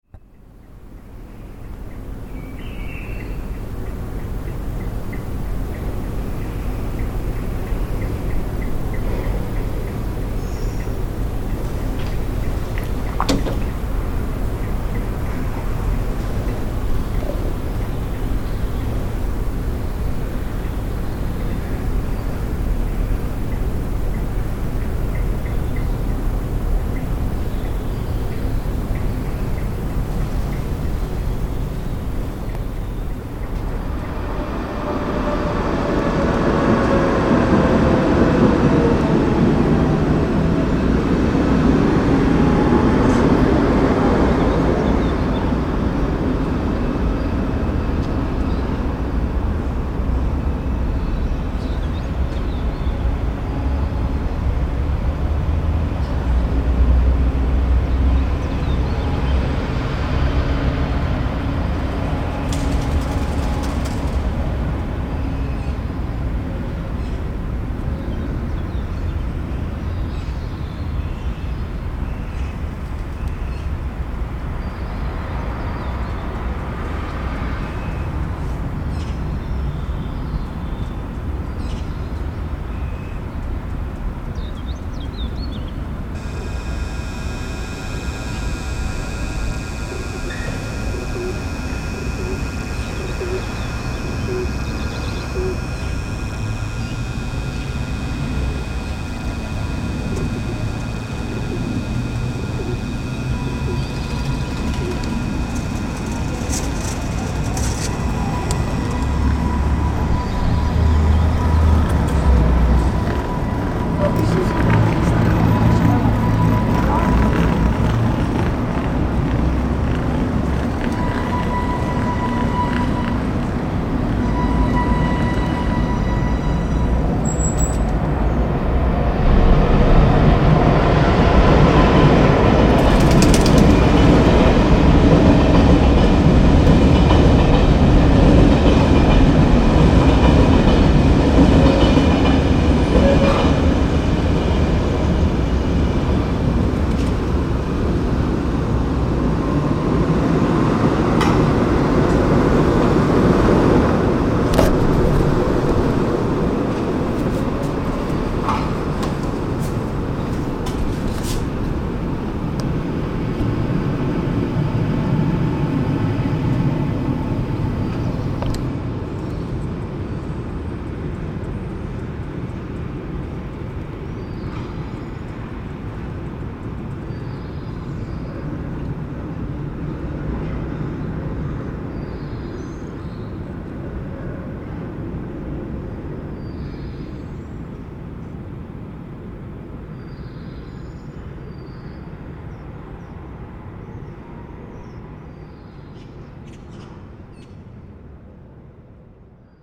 from the window, evening sounds of trafic and birds